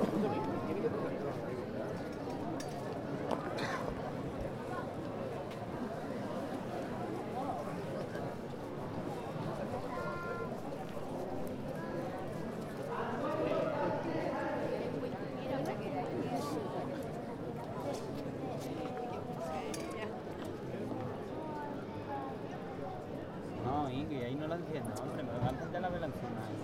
Frigiliana, Málaga, Spanien, Iglesia San Antonio - Easter procession in Andalucia near church
TASCAM DR-100mkII with integrated Mics
Frigiliana, Málaga, Spain